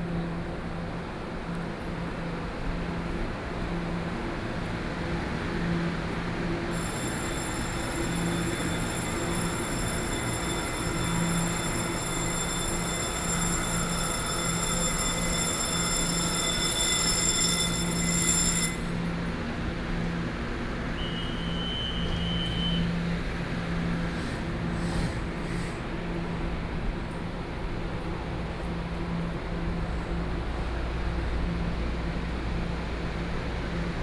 Yangmei Station - Soundwalk
Slowly out of the station from the platform, Sony PCM D50 + Soundman OKM II
Taoyuan County, Taiwan